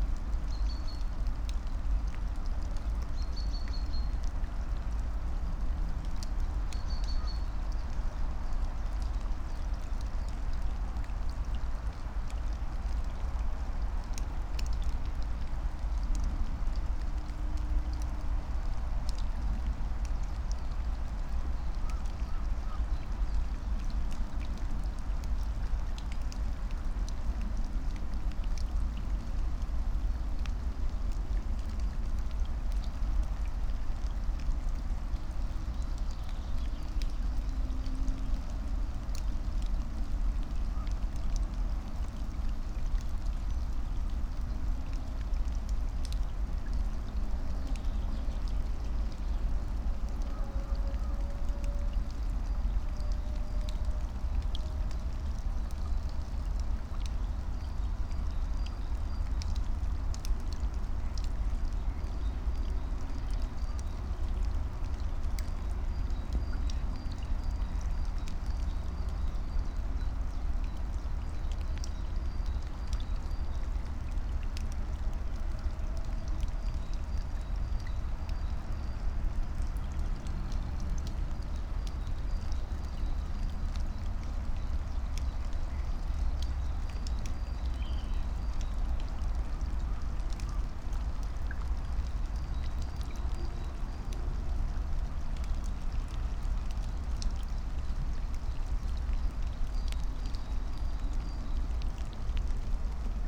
{"date": "2022-04-14 14:46:00", "description": "14:46 Berlin Buch, Lietzengraben - wetland ambience", "latitude": "52.64", "longitude": "13.46", "altitude": "49", "timezone": "Europe/Berlin"}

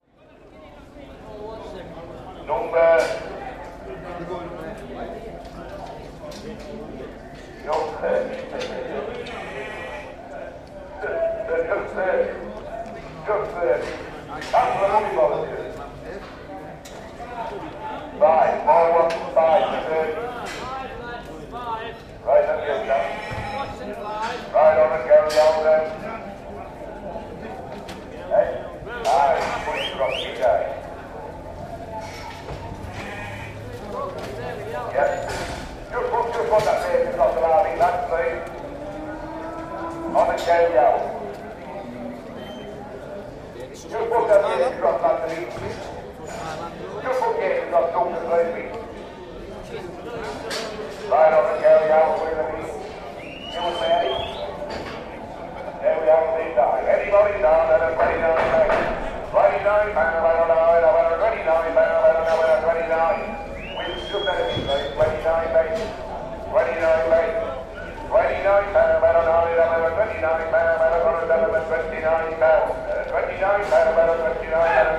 {"title": "Hawes Auction Mart", "description": "sheep auction at Hawes in the Yorkshire Dales", "latitude": "54.30", "longitude": "-2.19", "altitude": "250", "timezone": "Europe/London"}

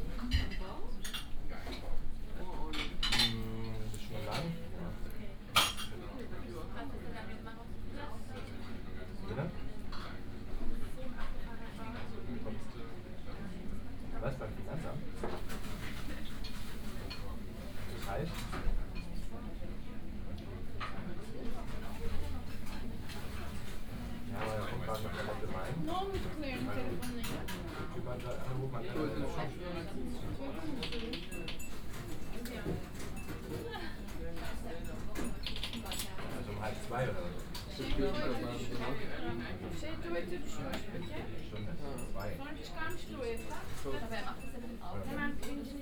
{"title": "Sushi Bar, Kottbusser Damm, Berlin - lunch time, ambience", "date": "2013-12-05 13:10:00", "description": "tiny sushi bar Musashi, ambience at lunch time\n(PCM D50, OKM2 binaural)", "latitude": "52.50", "longitude": "13.42", "altitude": "41", "timezone": "Europe/Berlin"}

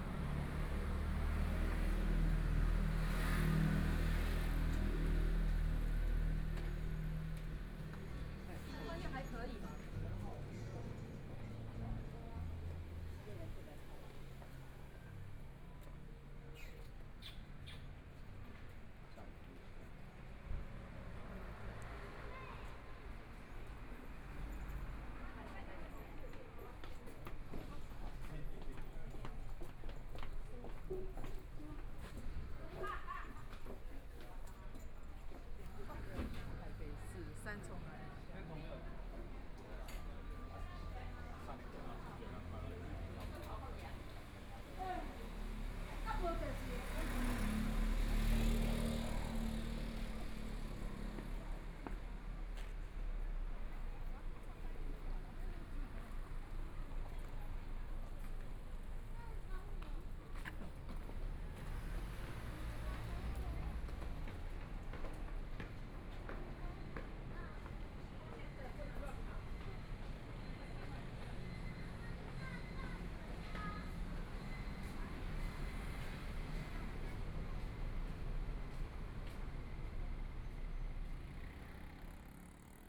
{"title": "中山區大直里, Taipei City - Quiet neighborhood", "date": "2014-02-16 18:44:00", "description": "Walking through the Street, Sound a variety of shops and restaurants, Traffic Sound\nPlease turn up the volume a little.\nBinaural recordings, Zoom 4n+ Soundman OKM II", "latitude": "25.08", "longitude": "121.55", "timezone": "Asia/Taipei"}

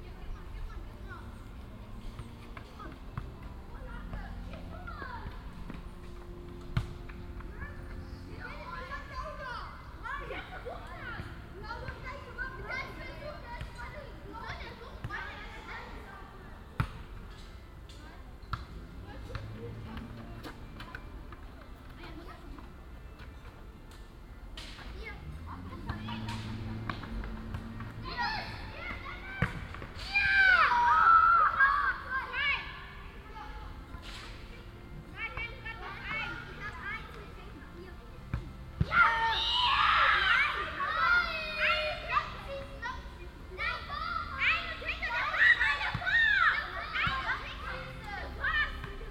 {"title": "cologne, bruesseler platz, in front of church", "date": "2008-06-11 20:39:00", "description": "fussball spielende kinder, windgeräusche in den bäumen, orgelmusik dringt durch das kirchenportal\nsoundmap nrw\n- social ambiences/ listen to the people - in & outdoor nearfield", "latitude": "50.94", "longitude": "6.93", "altitude": "59", "timezone": "Europe/Berlin"}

